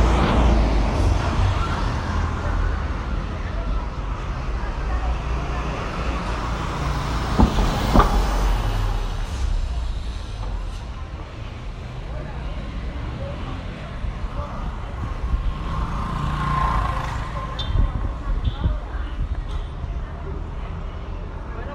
Cl., Bello, Antioquia, Colombia - Ambiente Autopista
Información Geoespacial
(latitud: 6.333717, longitud: -75.558393)
Autopista, Bello. Antioquia
Descripción
Sonido Tónico: Carros pasando
Señal Sonora: Motor arrancando
Micrófono dinámico (celular)
Altura: 1 metro
Duración: 3:01
Luis Miguel Henao
Daniel Zuluaga